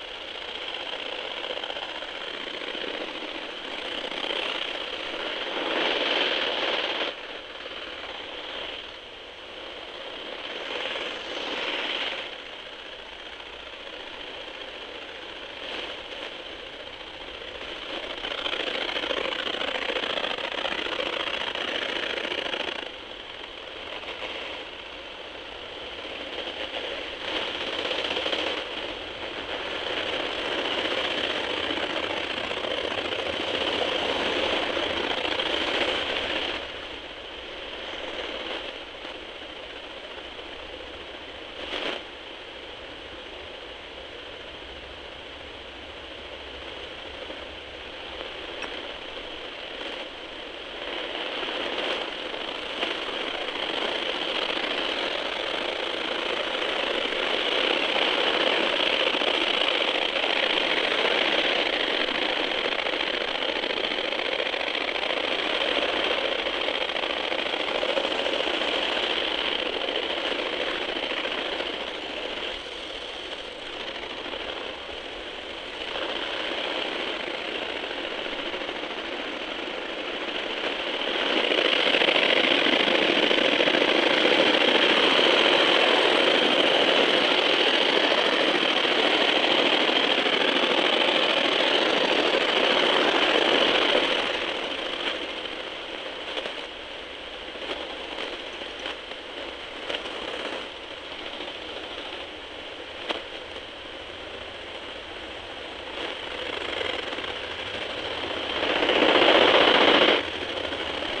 Poznan, Wilda district, yard of closed car school - high frequencies
walking around tall grass and bushes with a high frequency detector set to about 31kHz. Picking up the sound of feet going through the grass, grasshoppers chirping, some high notes of bird calls get registered too. so it's a mix of high frequencies and some of the ambience of the yard that the mics picked up anyway.